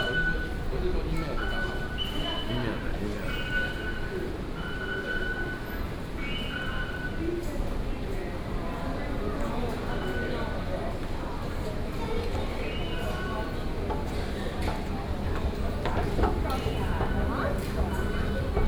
Banqiao Station, New Taipei City - walking in the MRT station
walking in the MRT station
New Taipei City, Taiwan, 30 September 2015